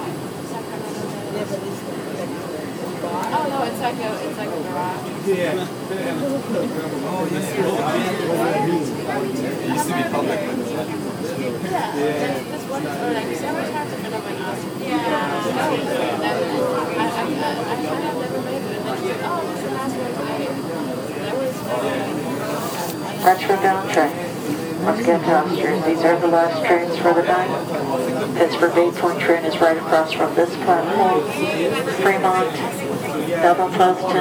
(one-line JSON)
{"title": "Mac Arthur BART Station, Oakland, CA, USA - Last BART train", "date": "2013-07-06 00:10:00", "description": "The last BART train (local subway system) of the evening, waiting for connecting trains with a car packed full of Friday night revelers.", "latitude": "37.83", "longitude": "-122.27", "altitude": "26", "timezone": "America/Los_Angeles"}